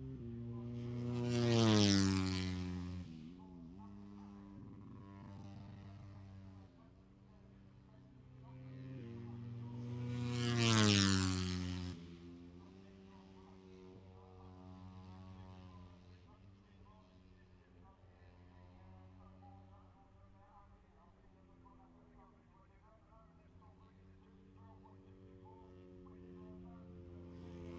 Silverstone Circuit, Towcester, UK - british motorcycle grand prix 2019 ... moto three ... fp2 contd ...
british motorycle grand prix 2019 ... moto three ... free practice two contd ... maggotts ... lavalier mics clipped to bag ...